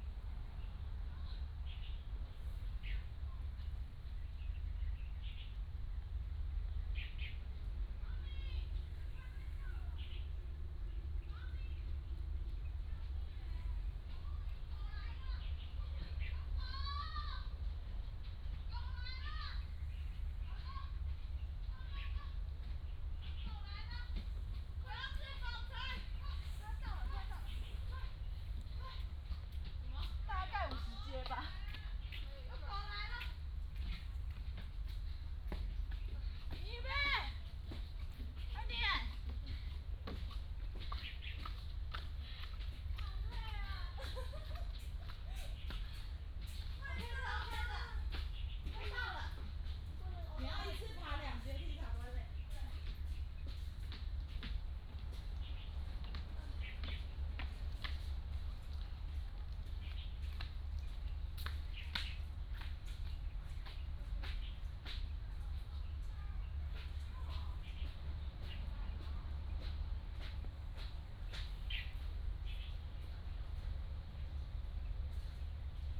蛤板灣, Hsiao Liouciou Island - Birds singing
Birds singing
Binaural recordings
Sony PCM D100+ Soundman OKM II
Pingtung County, Taiwan, 2 November